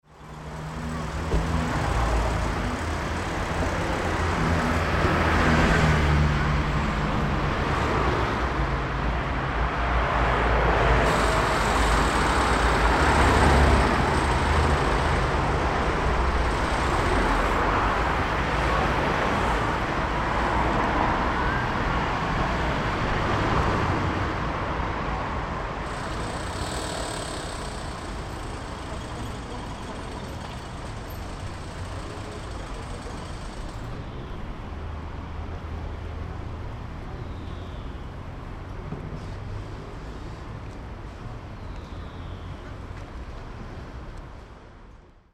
Berlin: Messpunkt Kottbusser Damm / Boppstraße - Klangvermessung Kreuzkölln ::: 08.05.2008 ::: 08:55
Berlin, Germany